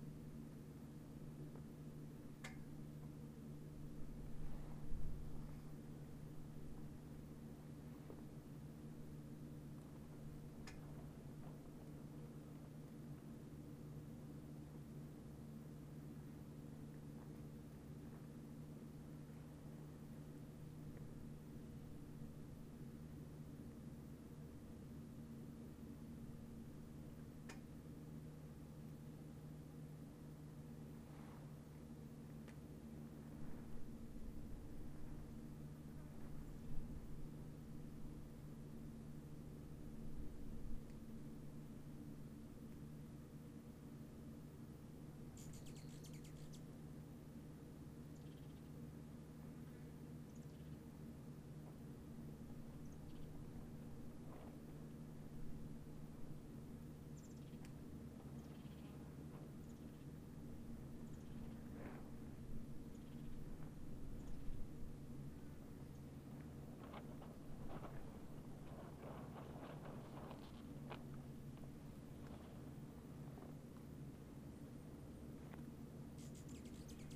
definitely a panting super hot puppy crashed in the shade also...
zoomh4npro
NM, USA, 26 June 2018, 10:30